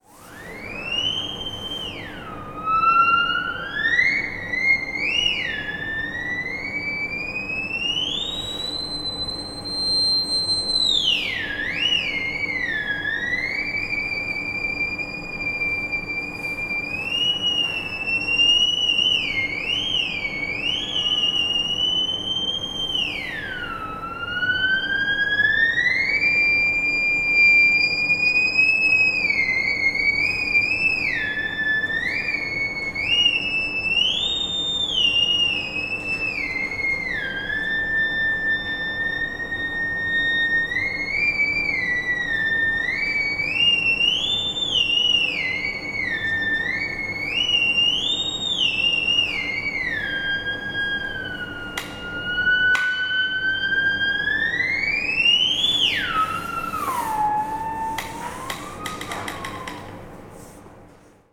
tondate.de: deutsches museum, halle - tondatei.de: deutsches museum, theremin
dilettantisches spiel mit dem theremin